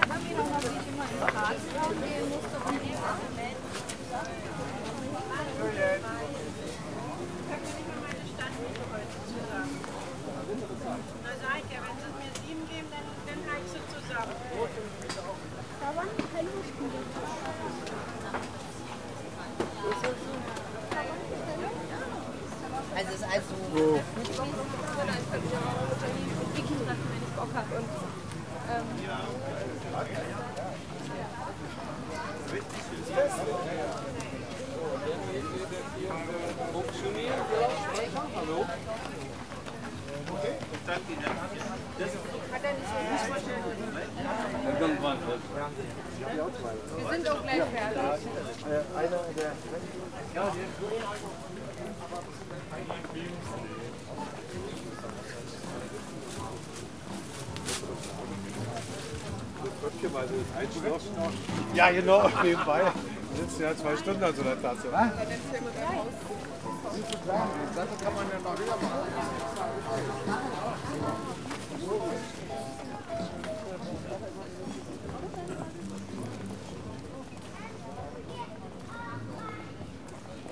Berlin, Kreuzberg, green area at Bergmannstraße - flea market
a few stands with all kinds of items for sale deployed around small green area next to Marheineke Halle. people rummaging through boxes, questioning the value of the items, bargaining over price with sellers, talking. music being played from cheep stereos.